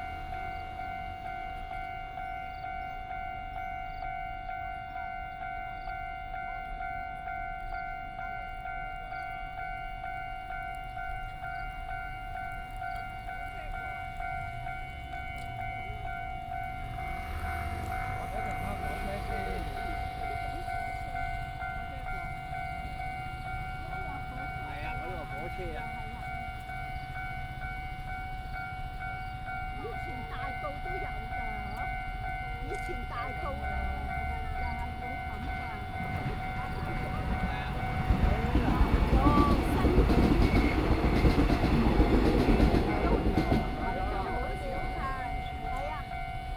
Zhandong Rd., Luodong Township - the railway level crossing
Traffic Sound, In the railway level crossing, Trains traveling through, Insects sound
Luodong Township, Yilan County, Taiwan, 27 July, ~19:00